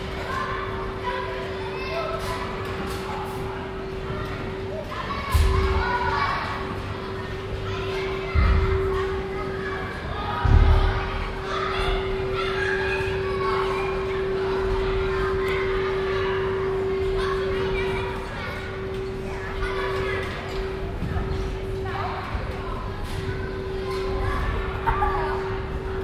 hilden, trampolino, sportzentrum
indoor freizeitaktivitäten
project: : res´onanzen - neanderland - social ambiences/ listen to the people - in & outdoor nearfield recordings